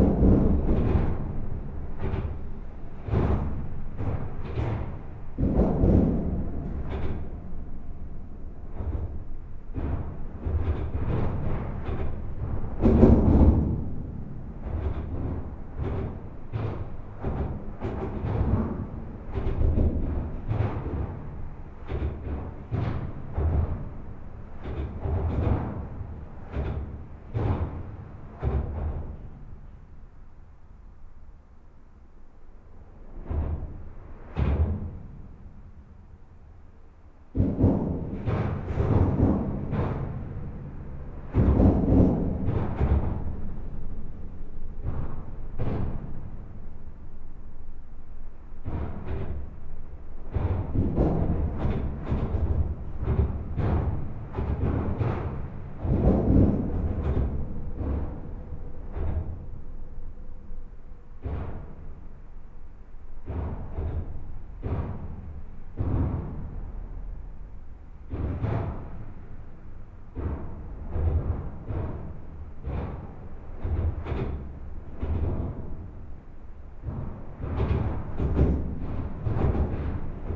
Namur, Belgique - The viaduct
This viaduct is one of the more important road equipment in all Belgium. It's an enormous metallic viaduct. All internal structure is hollowed. This recording is made from the outside, just below the caisson.